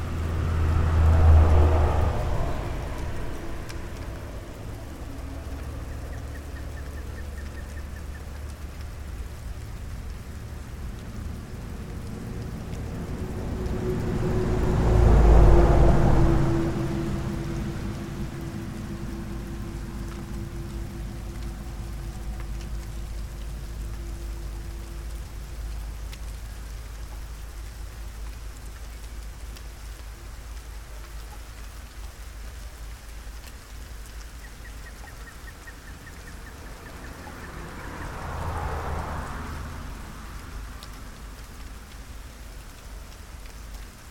rain and traffic under bridge, Skoki Poland
waiting under a bridge for rain to pass
31 March 2011